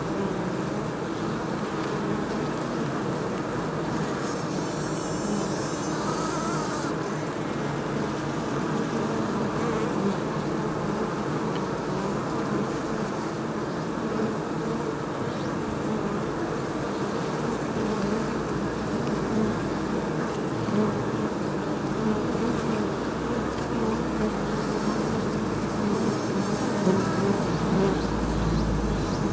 Beehives at Byeonsan
Man-made beehives at ground level within Byeonsan National Park